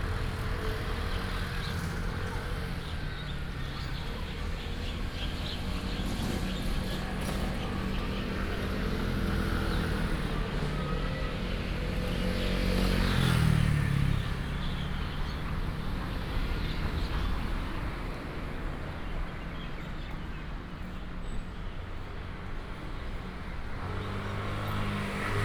Walking on the road, Traffic sound, The town, Bird calls
Hsinchu County, Taiwan, 17 January, 11:52